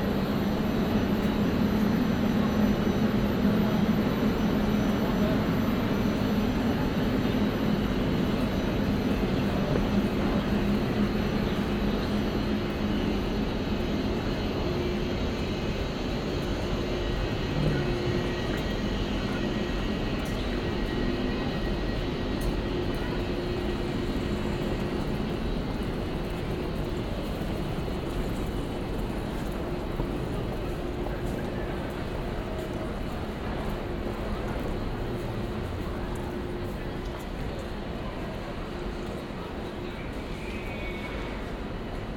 Warsaw Central, Warszawa, Poland - (99 BI) Railway platforms
Binaural recording of railway station platforms.
Recorded with Soundman OKM + Zoom H2n
województwo mazowieckie, Polska, 15 February 2017